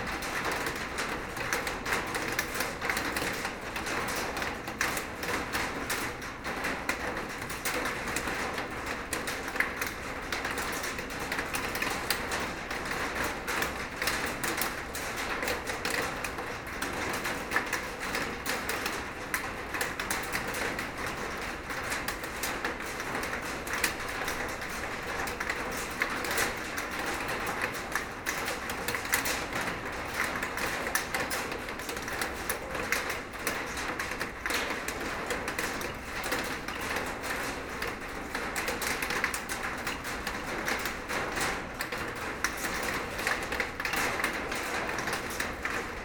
Seraing, Belgique - Rain
In the abandoned coke plant, rain is falling on a huge metal plate, it's windy and very bad weather. Drops falling from the top of the silo are large.